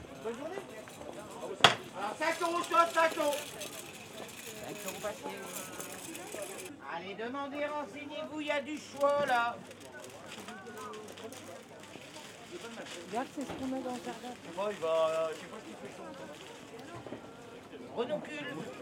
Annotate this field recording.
St-Omer, Marché du samedi matin - les marchands de fleurs (Tulipes...)